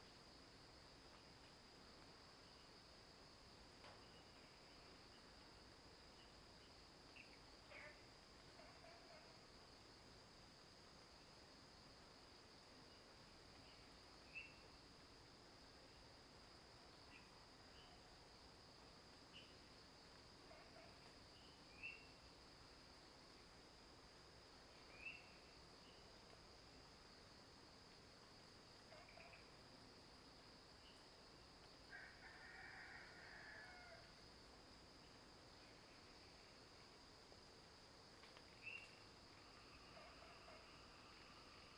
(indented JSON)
{
  "title": "Green House Hostel, 桃米里 - Early morning",
  "date": "2015-04-29 04:28:00",
  "description": "Frogs chirping, Early morning, Crowing sounds\nZoom H2n MS+XY",
  "latitude": "23.94",
  "longitude": "120.92",
  "altitude": "503",
  "timezone": "Asia/Taipei"
}